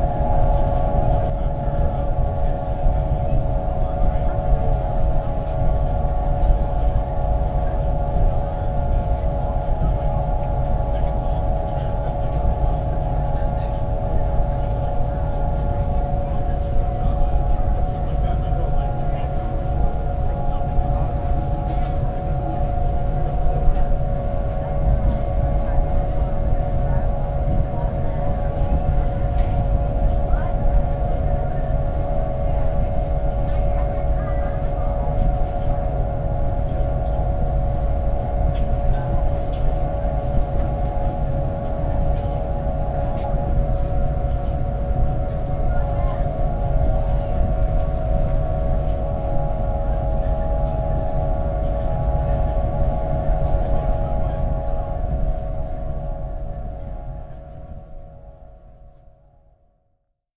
Ferry Harp, Central Business District, Seattle, WA, USA - ferry harp
Crouching behind a short wall, shielding myself from the wind, while listening to the wind make music through a grated platform which was affixed toward the bow of the Bremerton Ferry, Seattle, WA.
Sony PCM-MD50